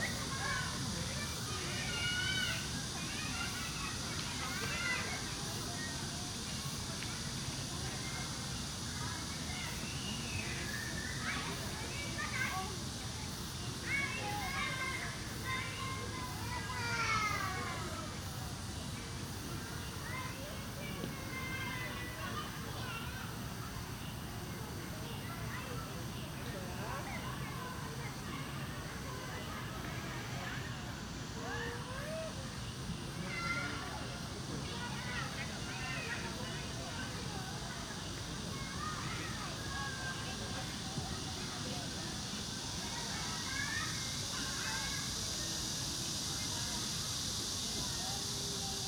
대한민국 서울특별시 서초구 양재2동 236 양재시민의숲 - Yangjae Citizens Forest, Children Playing, Cicada
Yangjae Citizens' Forest, Summer weekend. childrens playing traditional games, cicada
양재시민의숲, 여름 주말. 무궁화꽃이 피었습니다, 매미